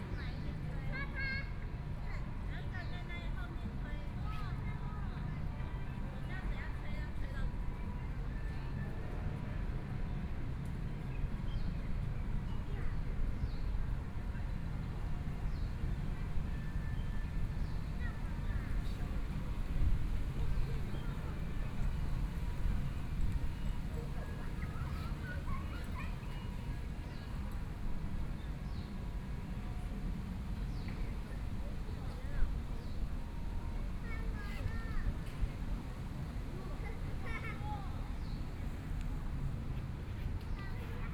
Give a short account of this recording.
Sitting in the park, Traffic Sound, child's voice, Binaural recordings